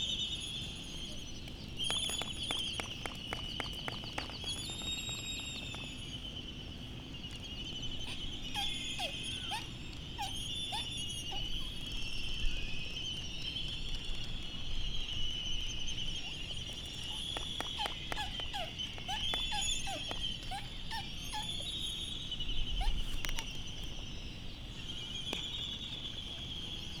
United States Minor Outlying Islands - Laysan albatross soundscape ...
Laysan albatross soundscape ... Sand Island ... Midway Atoll ... bird calls ... laysan albatross ... canaries ... bristle-thighed curlew ... open lavalier mics on mini tripod ... background noise ... Midway traffic ... handling noise ... some windblast ...
15 March 2012, ~08:00